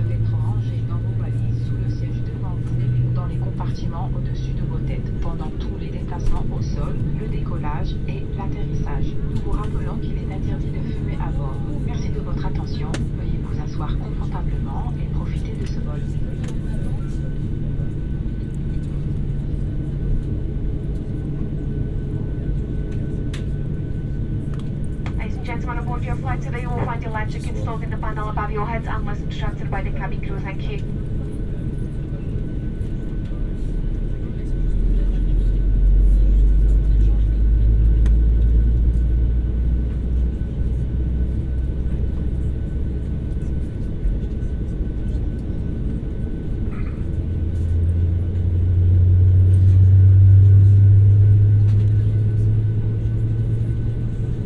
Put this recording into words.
Before the flight in a plane (english company low cost) to go to London.